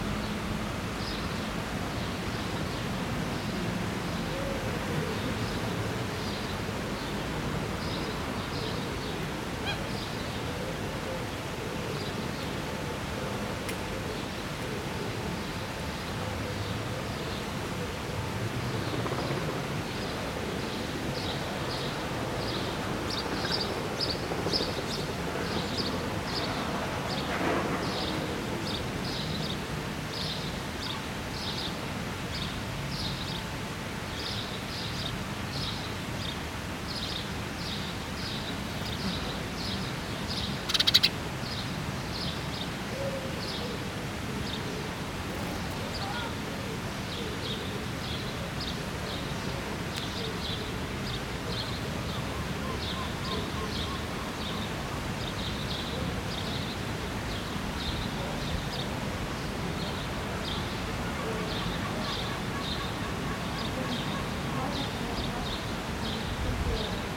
Holstenstraße, Kiel, Deutschland - Sunday morning in Kiel
Quiet Sunday morning in the pedestrian zone, shops are closed, some people passing by, a little traffic in a distance, birds (sparrows and gulls), distant church bells and 10 o'clock chimes of the town hall clock. Sony PCM-A10 recorder with xy microphone and furry windjammer.
Schleswig-Holstein, Deutschland, 30 May 2021